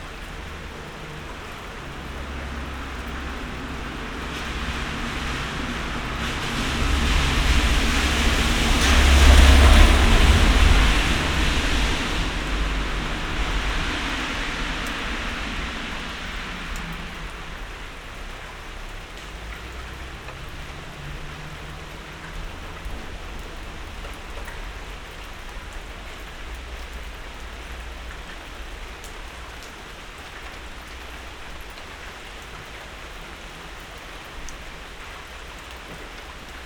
berlin, sanderstraße: unter balkon - the city, the country & me: under balcony
the city, the country & me: july 17, 2012
99 facets of rain
Berlin, Germany, 5 June, 03:34